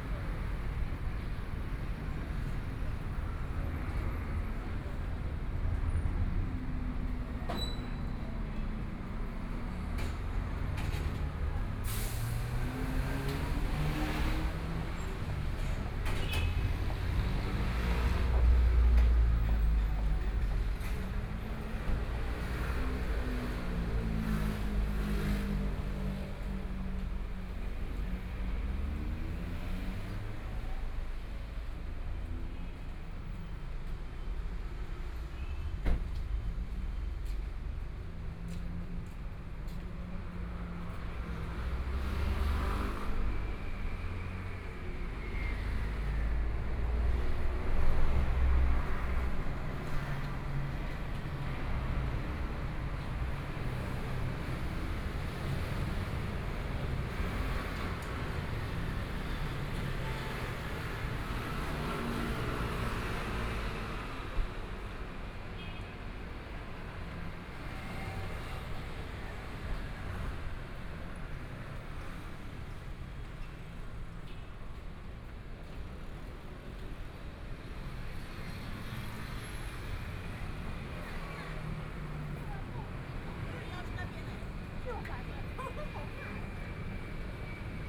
Tianjin St., Zhongzheng Dist. - walking in the Street
soundwalk, Traffic Sound, from Chang'an E. Rd. to Nanjing E. Rd., Binaural recordings, Zoom H4n+ Soundman OKM II